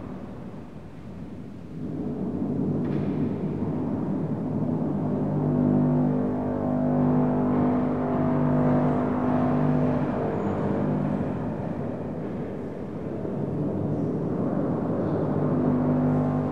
Roma, Basilica Santa Maria sopra Minerva, WLD
Roma, Basilica Sanctae Mariae supra Minervam. Roma, Basilica of Saint Mary Above Minerva. WLD - world listening day